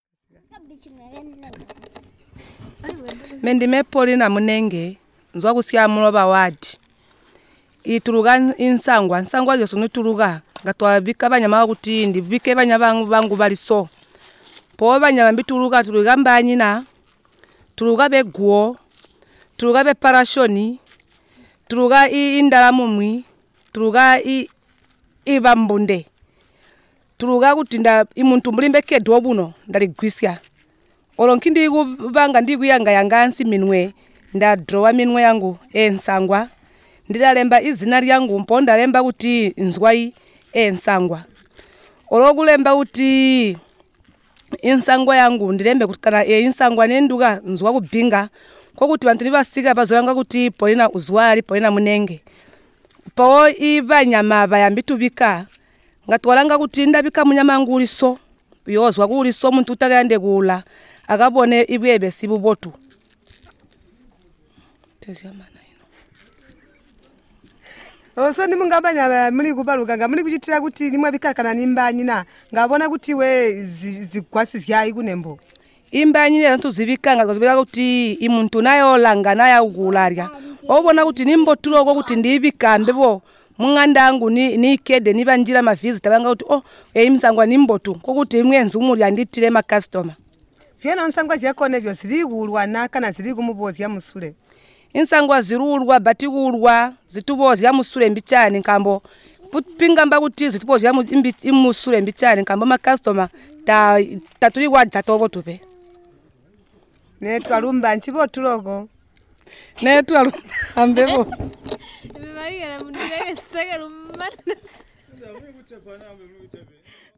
Simatelele, Binga, Zimbabwe - I'm Polina Munenge, a weaver....
Polina Munenge is a member of Simatelele Women’s Forum and a weaver. In her interview she talks about a number of common weaving patterns among the women. She also explains how the weavers are selling their products.
a recording by Ottilia Tshuma, Zubo's CBF at Simatelele; from the radio project "Women documenting women stories" with Zubo Trust, a women’s organization in Binga Zimbabwe bringing women together for self-empowerment.
Zambia, 26 July, 19:00